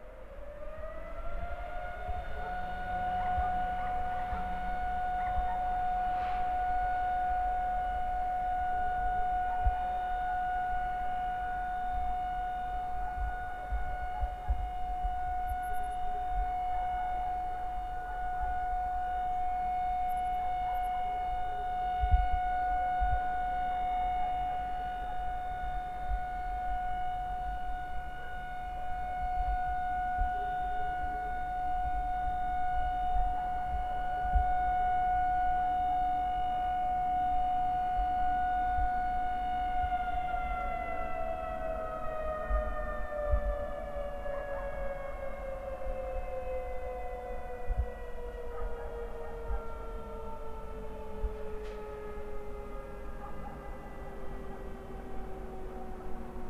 Koscielisko, Chotaz Bor, firefighter siren at night
8 September 2011